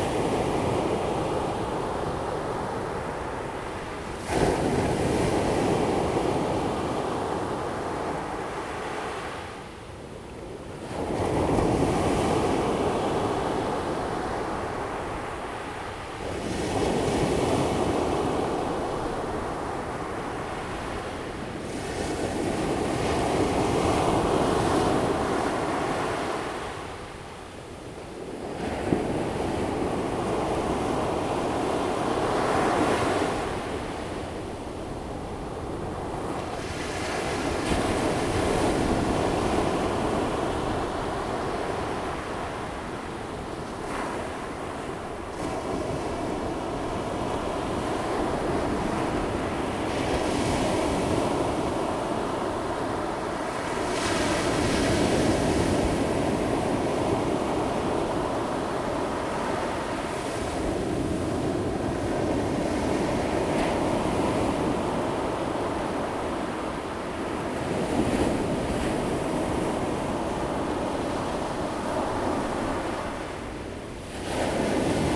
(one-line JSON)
{"title": "Qianzhouzi, Tamsui Dist., 新北市 - Sound of the waves", "date": "2017-01-04 15:29:00", "description": "On the beach, Sound of the waves\nZoom H2n MS+XY", "latitude": "25.22", "longitude": "121.44", "altitude": "3", "timezone": "GMT+1"}